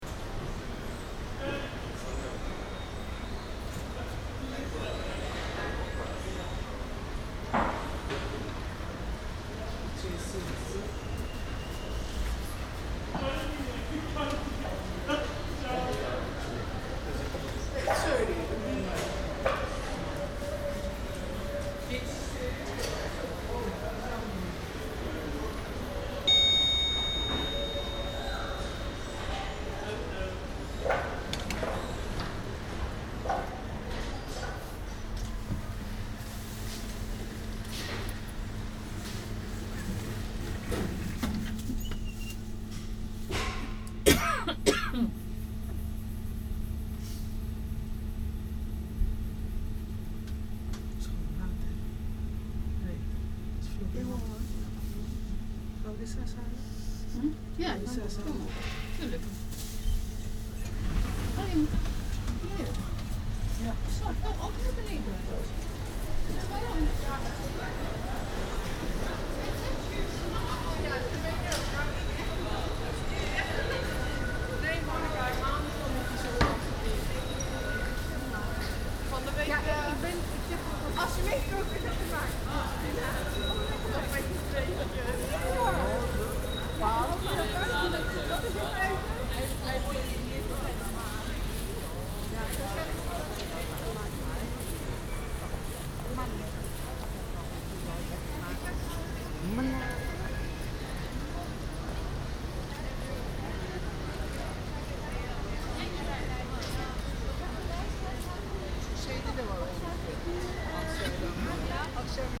Cramped elevator
Escalators en de elevator (030) at the shops in the Pathé Spuiplein building. Elevators are interesting places where people are involuntary cramped together. Very unnatural.
Recorded as part of The Hague Sound City for State-X/Newforms 2010.
The Hague, The Netherlands